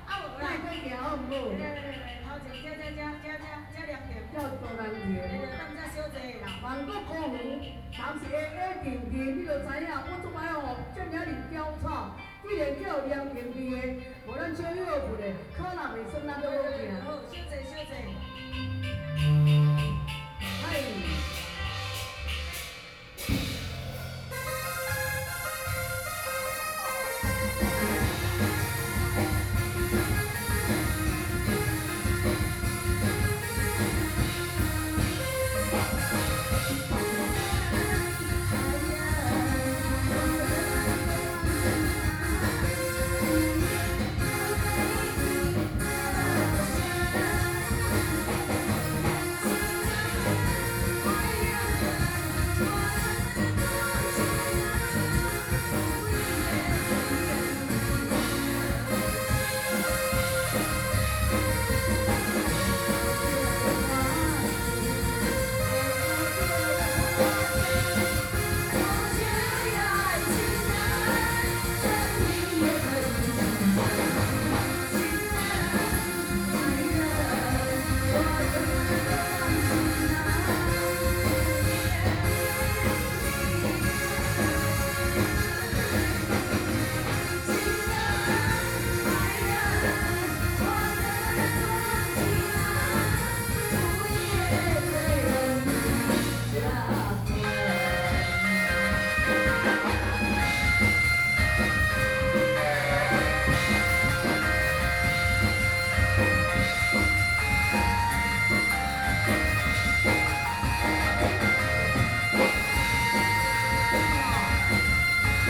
Taoyuan Village, Beitou - Taiwanese opera
Taiwanese Opera, Zoom H4n + Soundman OKM II